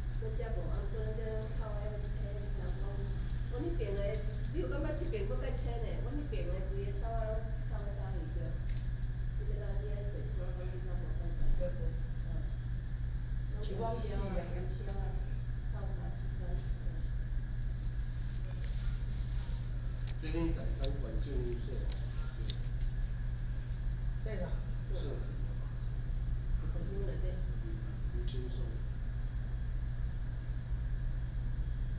{"title": "南寮村, Lüdao Township - Chat", "date": "2014-10-31 13:46:00", "description": "Tourists, Chat, Air conditioning sound, Opposite the plant noise", "latitude": "22.66", "longitude": "121.47", "altitude": "9", "timezone": "Asia/Taipei"}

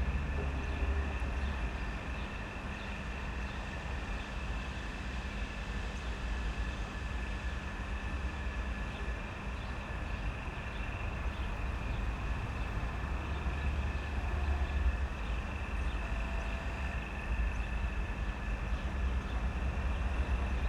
{"title": "Am Treptower Park, Berlin - factory premises between S-Bahn tracks", "date": "2013-05-17 14:30:00", "description": "company for mobile cranes, factory premises between the S-Bahn tracks, yard ambience, sounds of work and workers. Sonic exploration of areas affected by the planned federal motorway A100, Berlin.\n(SD702, Audio Technica BP4025)", "latitude": "52.49", "longitude": "13.46", "altitude": "38", "timezone": "Europe/Berlin"}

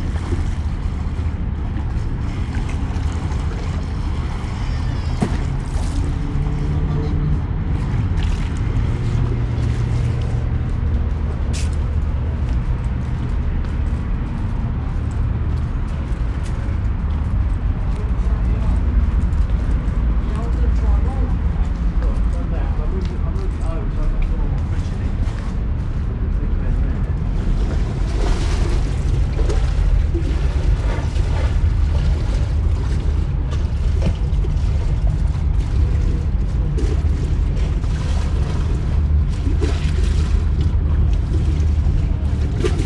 {"title": "Lambeth, London, UK - Thames River Walk 1", "date": "2016-02-10 17:00:00", "description": "Recorded with a pair of DPA 4060s and a Marantz PMD661", "latitude": "51.50", "longitude": "-0.12", "altitude": "9", "timezone": "Europe/London"}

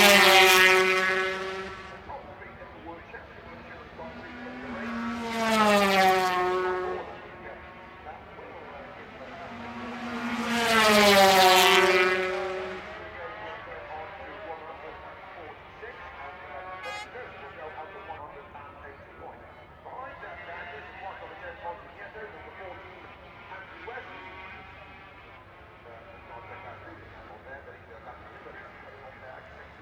{"title": "Unnamed Road, Derby, UK - British Motorcycle Grand Prix 2004 ... 250 race ...", "date": "2004-07-25 11:30:00", "description": "British Motorcycle Grand Prix 2004 ... 250 race ... one point stereo mic to mini-disk ... commentary ...", "latitude": "52.83", "longitude": "-1.37", "altitude": "74", "timezone": "Europe/London"}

